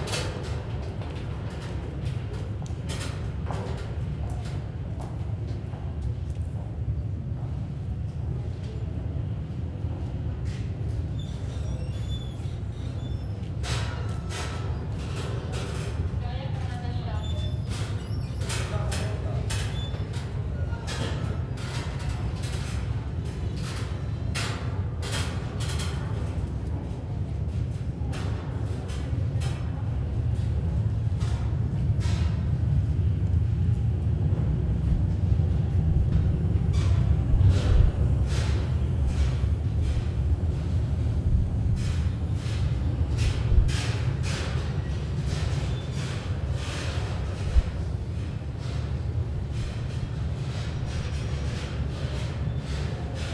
Walking around the still half finished new areas at the Palais de Tokyo during the 30 hr non-stop exhibition.